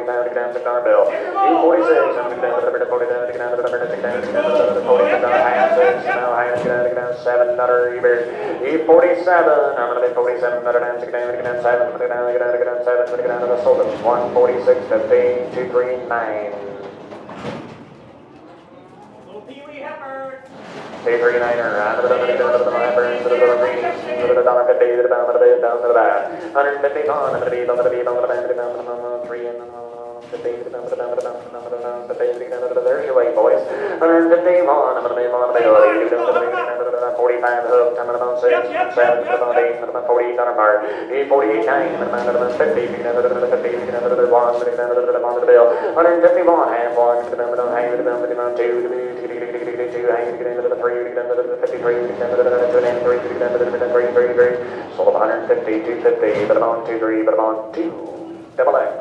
A man is talking during the sale of the cattle in Saint Joseph, Missouri.
The cattle is passing by, people are bidding and buying the cows by auction.
Sound recorded by a MS setup Schoeps CCM41+CCM8
Sound Devices 788T recorder with CL8
MS is encoded in STEREO Left-Right
recorded in may 2013 in Saint Joseph, Missouri (USA).